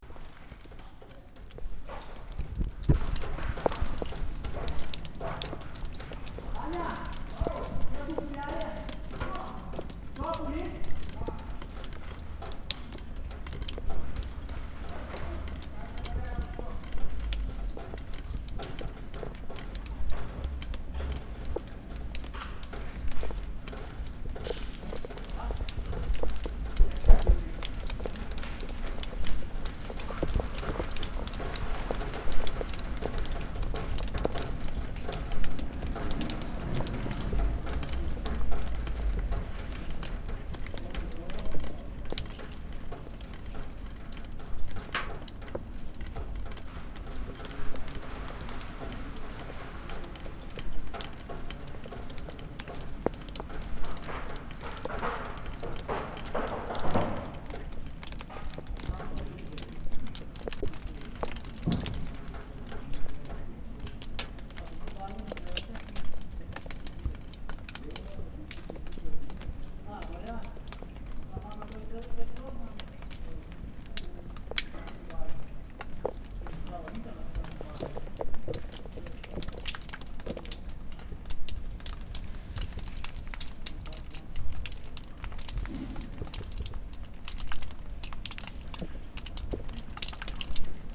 The Runner on Castle Stairs
On the old castle stairs one can meet fast runners in the evening. After the midnight last tourists disappear and you could have the feeling that the mystic atmosphere, which unfortunately got lost long time ago.
11 January 2011, 13:08